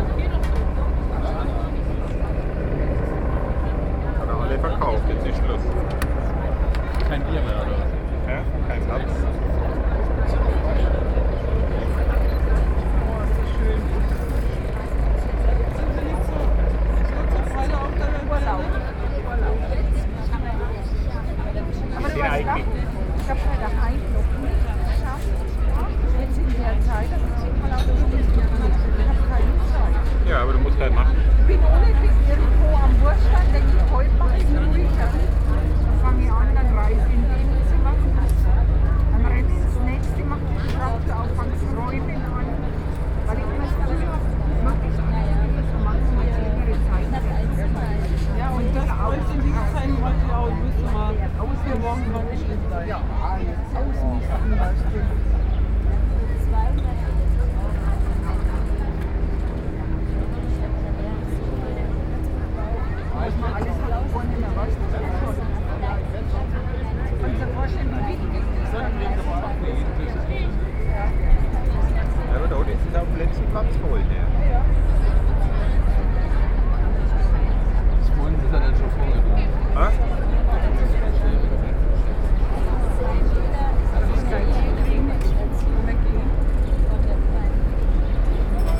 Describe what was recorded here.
On a Boat near the Berliner Dom